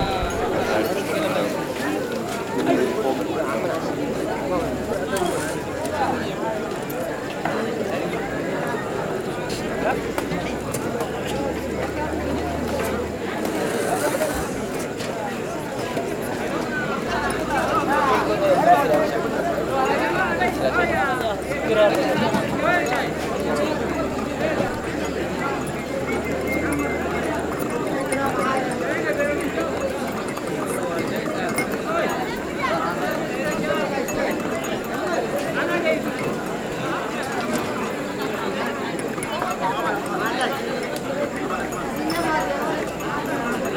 Edaga, مصوع، Eritrea - massawa market
massawa eritrea, it was early evening, hot and dark already and i stumbled upon this market full sellers customers and lights. The exact location i do not know
تولود, Eritrea, 26 June 1998, 19:16